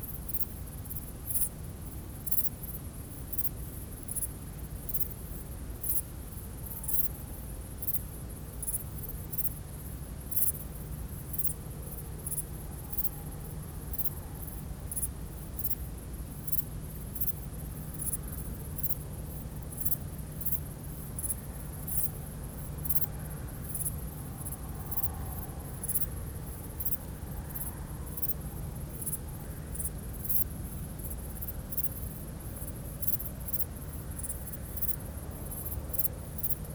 By night, a very soft ambience with criquets and owl on the Seine river bank.
France, 18 September, 21:50